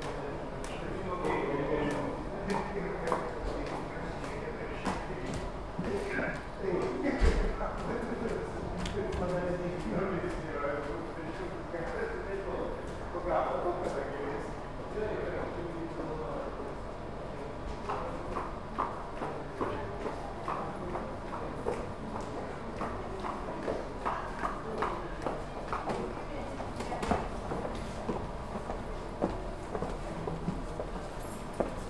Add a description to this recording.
at the security post of the House of Lithuanian Parliament